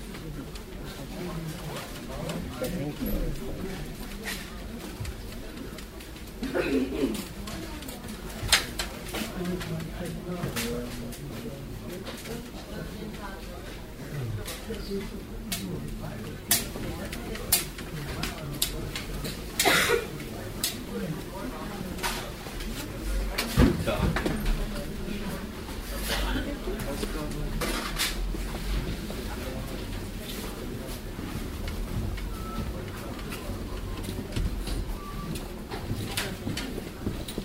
im straßenverkehrsamt, vormittags
project: : resonanzen - neanderland - social ambiences/ listen to the people - in & outdoor nearfield recordings